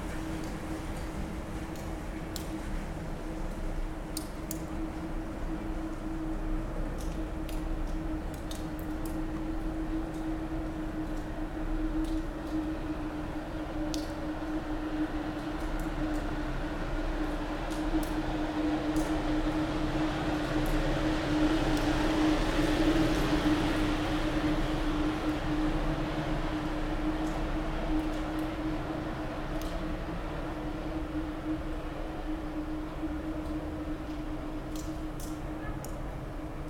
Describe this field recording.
cyclists on Bristol to Bath trail riding through an old rail tunnel